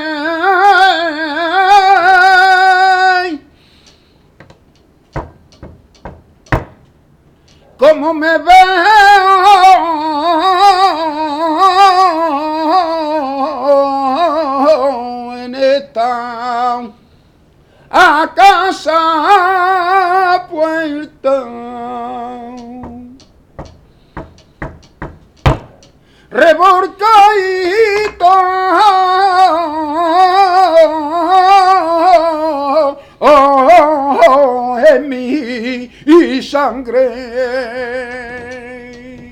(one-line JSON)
{"title": "Henson Park Hotel", "date": "2010-09-21 18:58:00", "description": "A capella Flamenco", "latitude": "-33.91", "longitude": "151.16", "altitude": "20", "timezone": "Australia/Sydney"}